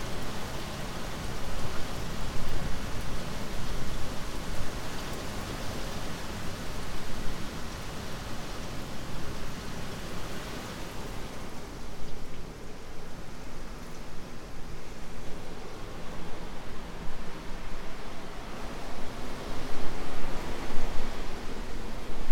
room, Novigrad, Croatia - doors
room, wind, thunder, rain, creaking with doors, steps ...